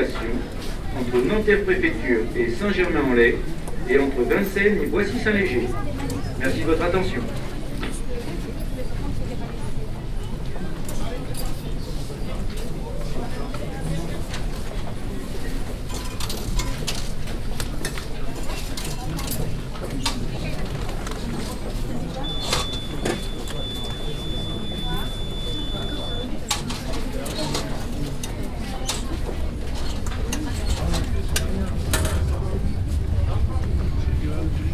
Paris, Chatelet - Les Halles, RER station, Ticket vending machine, crowd